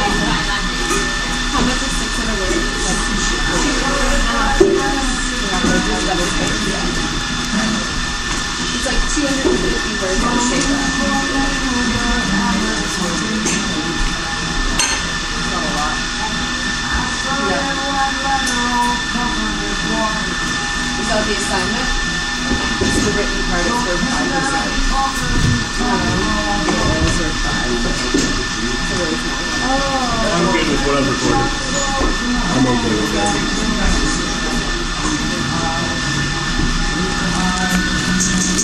Montreal: Le Cagibi - Le Cagibi
equipment used: PMD660 Portable Solid State Recorder with two Shure 58 Microphones